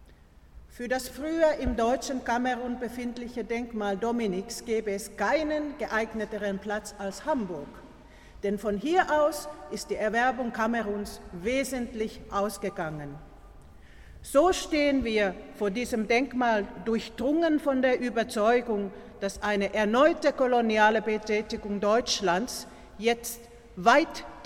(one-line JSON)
{"title": "Echos unter der Weltkuppel 03 Westfront", "date": "2009-11-01 14:09:00", "latitude": "53.56", "longitude": "9.99", "altitude": "14", "timezone": "Europe/Berlin"}